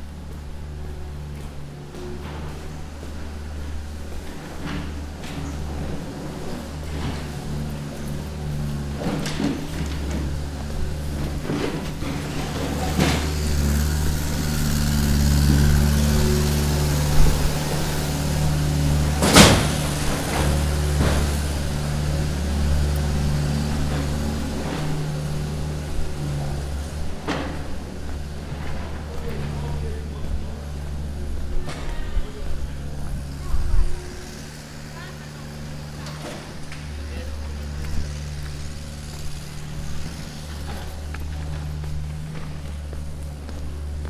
Biella BI, Italia - Biella Piazzo 1
short walk from my studio to piazza cisterna, then piazza cucco, back to piazza cisterna and back to the studio. Zoomq3hd